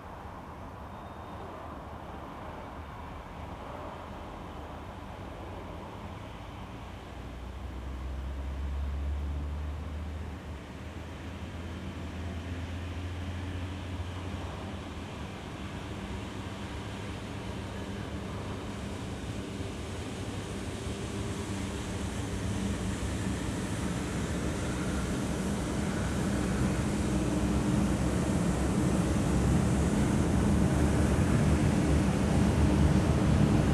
Saint-Avre, trains.
Saint-Avre, trains and cars in the background. Recorded on minidisc in 1999.